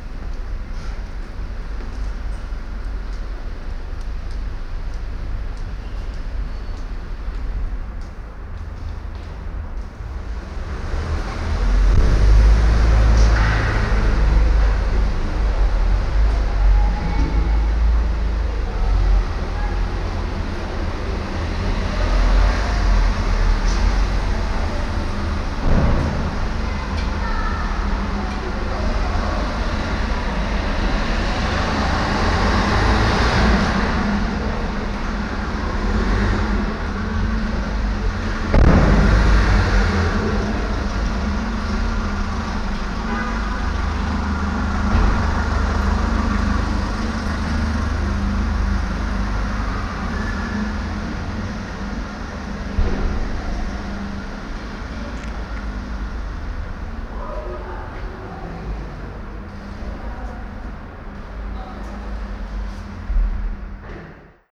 In einem Parkhaus. Die Klänge ein- und ausfahrender Fahrzeuge, das Schlagen von Türen, Benutzung des Kartenautomatens in der hallenden, offenen, betonierten Architektur.
Inside a car park. The sound of cars driving in and out, the banging of car doors and the sound of the card automat reverbing in the open, concrete architecture.
Projekt - Stadtklang//: Hörorte - topographic field recordings and social ambiences